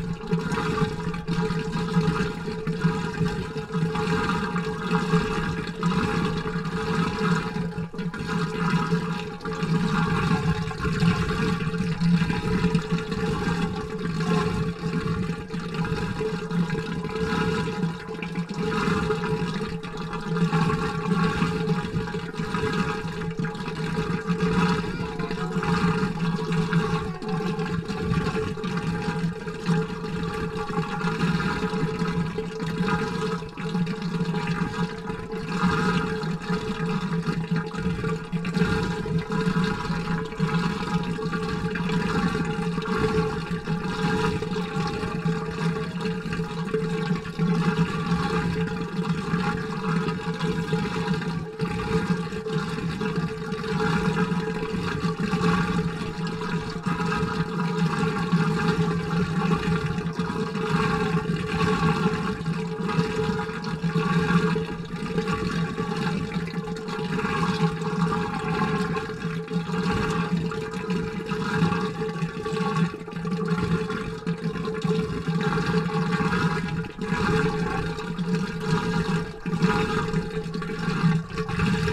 Recording of water pool machine in Saint Nicolai Park.
Recorded with Sony PCM D100